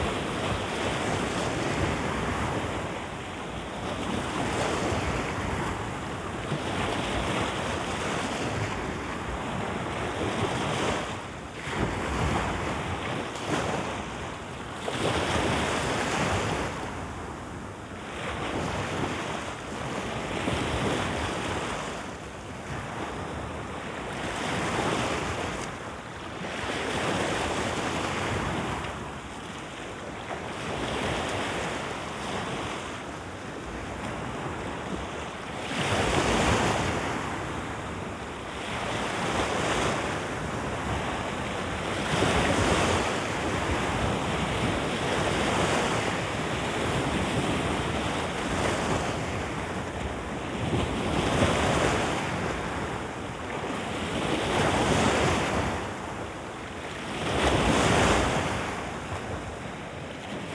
Lapping waves of the sea.
Шум прибоя недалеко от устья реки Тамица.
Arkhangelskaya oblast', Russia, 15 June 2015